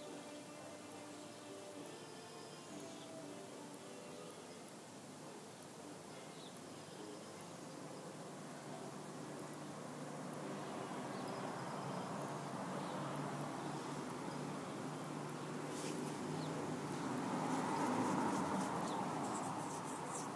North Berkeley Sunday Morning Coming Down
Sunny Sunday morning coming down, new millennium version